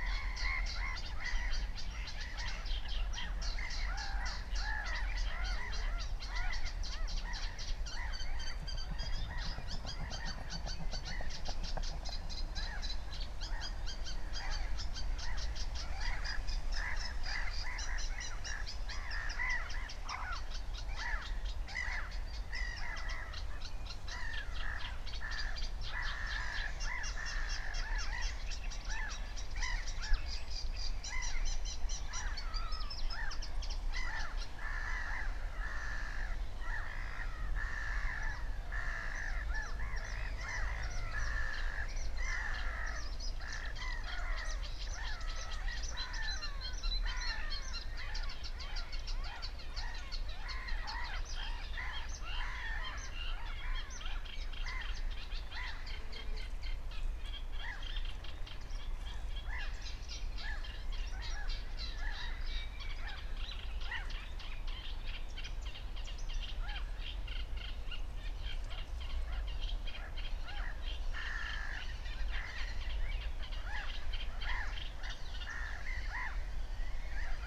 19:00 Berlin, Buch, Moorlinse - pond, wetland ambience